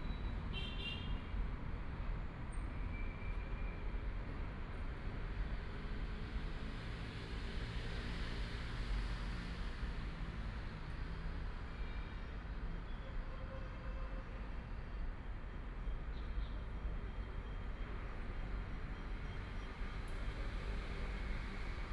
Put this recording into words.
Traffic Sound, Bell sounds, Riding a bicycle bell sounds are everywhere to pick up messages can be recovered, Binaural recording, Zoom H6+ Soundman OKM II